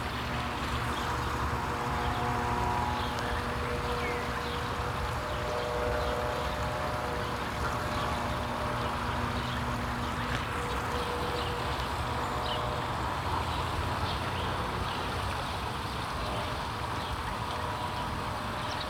Im Gruga Park in der Vogelfreiflug Anlage. Die Klänge der Vogelstimmen und das Plätschern einer kleinen Fontäne im Vogelteich. Ein Flugzeug überquert die Anlage.
Inside the Gruga Park in an areal where birds are caged but are enabled to fly around. The sound of the bird voices and the water sounds of a small fountain inside the small bird lake. A plane is crossing the sky.
Projekt - Stadtklang//: Hörorte - topographic field recordings and social ambiences